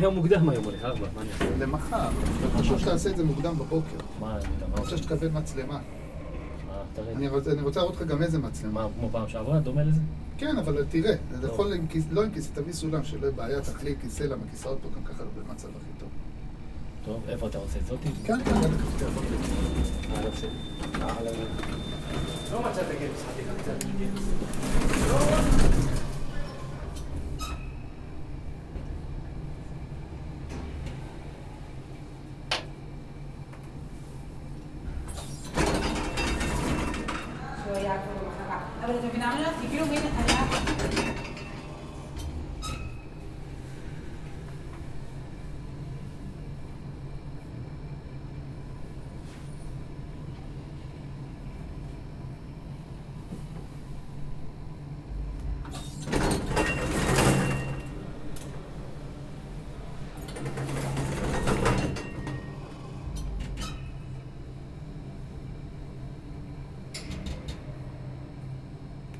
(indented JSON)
{
  "title": "Martin Buber St, Jerusalem - Elevator 2 at Bezalel Academy of Arts and Design",
  "date": "2019-03-25 15:50:00",
  "description": "Elevator (2) at Bezalel Academy of Arts and Design.\nSome people talking, Arabic and Hebrew.",
  "latitude": "31.79",
  "longitude": "35.25",
  "altitude": "811",
  "timezone": "Asia/Jerusalem"
}